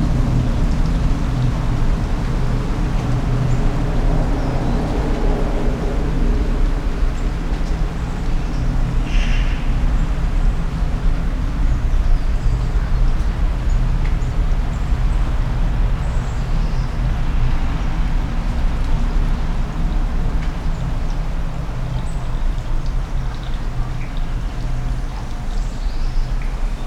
Brussels, Avenue Molière, light rain, birds and a plane
Its sometimes even more beautiful when nothing happens..
PCM-D50, SD-MixPre, Rode NT4.